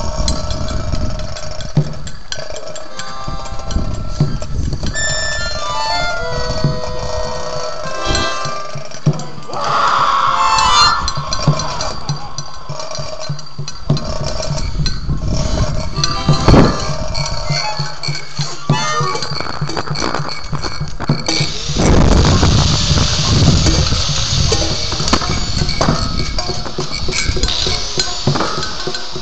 Paddonhurst, Bulawayo, Zimbabwe - Coughing at work
Coughing at work due to poor health service delivery. Sound art by Kudzai Chikomo and Owen Maseko recorded at Ko-Maseko Art & Ceramics Studios.
January 2014